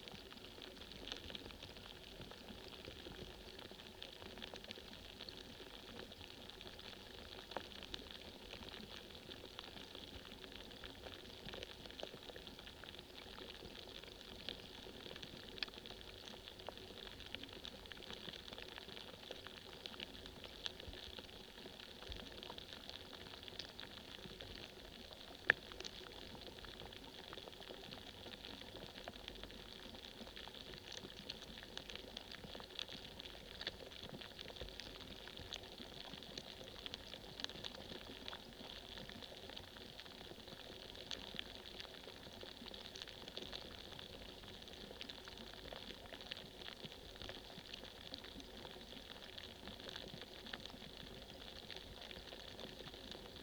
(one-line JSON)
{"title": "Lithuania, Utena, on the ice", "date": "2012-01-17 15:15:00", "description": "contact microphone placed upon a edge of first ice in the river", "latitude": "55.50", "longitude": "25.54", "altitude": "142", "timezone": "Europe/Vilnius"}